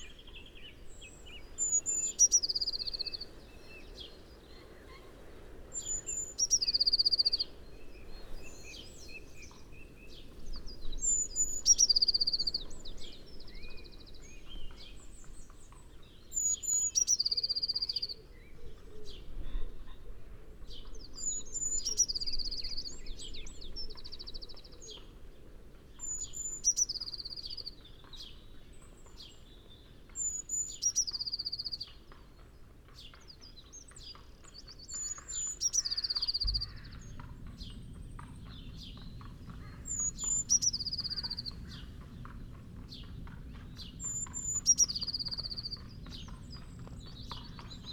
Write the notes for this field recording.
singing blue tit ... passing horse ... dpa 4060s in parabolic to mixpre3 ... not edited ... background noise ... bird calls ... song ... wren ... song thrush ... pheasant ... coal tit ... blackbird ... robin ... collared dove ...